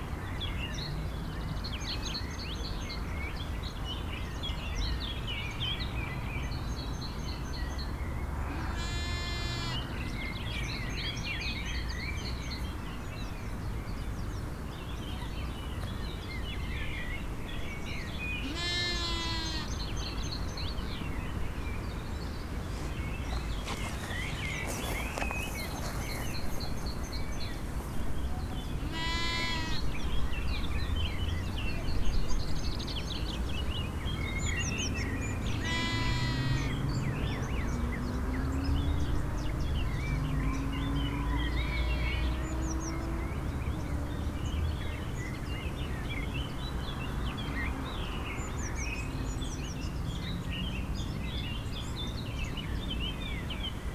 radevormwald, am sportplatz: schafweide - the city, the country & me: sheep-run
birds and sheep
the city, the country & me: may 8, 2011
Radevormwald, Germany, 8 May 2011, ~19:00